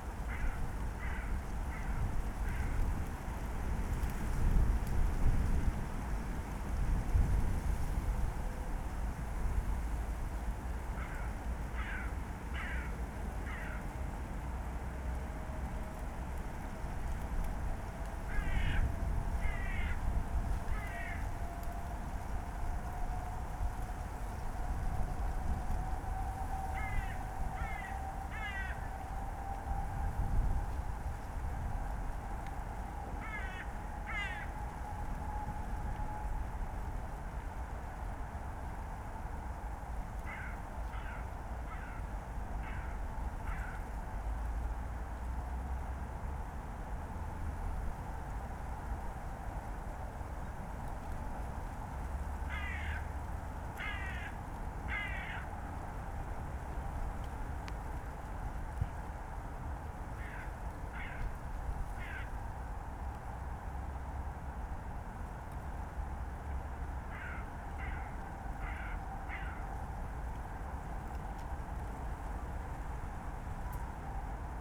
place revisited. cold agressive wind today
(Sony PCM D50, OKM2)
Berlin, Germany, January 2015